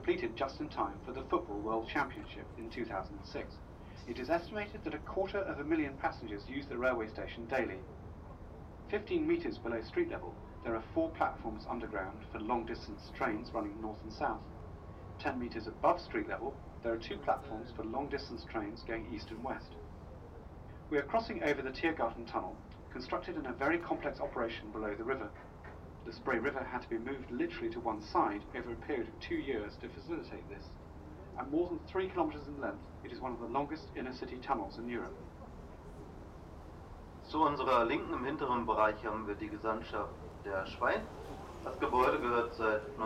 Spreebogenpark, Berlin, Germany - Boat Ride On Spree
7 October, 11:06